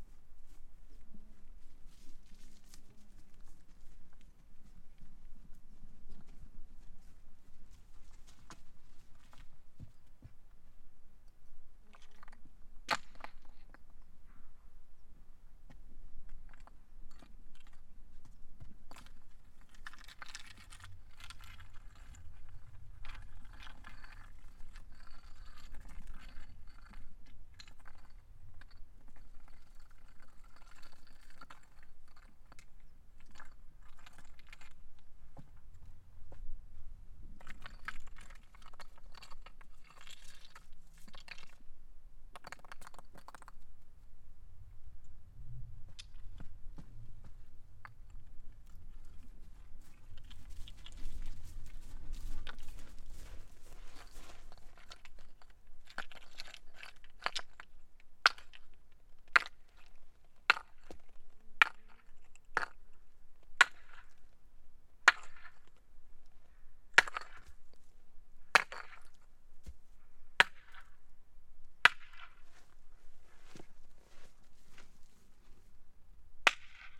Teriberka, Murmansk district, Sekretarskoe Lake, Russia - Reflections of Rocks Water Voice
Dead quiet place at the Sekretarskoe Lake near the Barents Sea. Insects attack. Unusual acoustic reflections in unusualy silent place.
Recorded with Tereza Mic System - Zoom F6